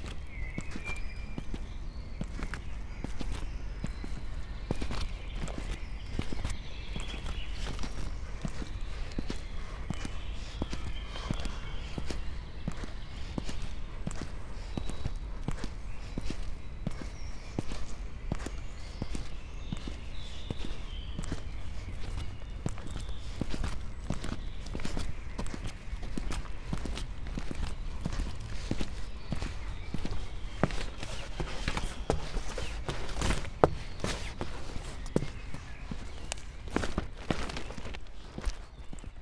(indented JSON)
{
  "title": "inside the pool, mariborski otok - white dots, walking",
  "date": "2015-05-03 19:31:00",
  "description": "blue deepens with dark clouds below puddles, pink raincoat with white dots, who would imagine more disturbing creature in this greenish-scape ...",
  "latitude": "46.57",
  "longitude": "15.61",
  "altitude": "258",
  "timezone": "Europe/Ljubljana"
}